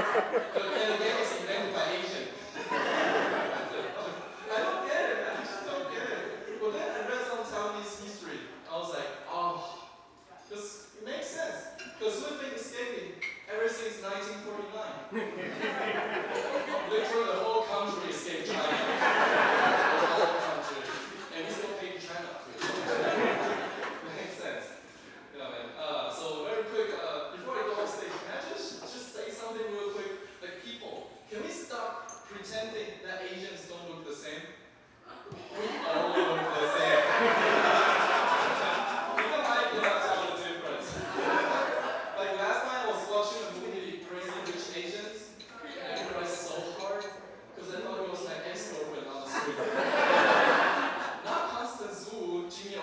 No., Chenggong 2nd Street, Zhubei City, Hsinchu County, Taiwan - Stand-up Comedy at Ruban Bistro
A few minutes from Chiu Ka-Un's set, part of a comedy night hosted by Sam Yarbs. The audience eats and drinks while enjoying the performance at Ruban Bistro (in operation from 2019-2021). Stereo mics (Audiotalaia-Primo ECM 172), recorded via Olympus LS-10.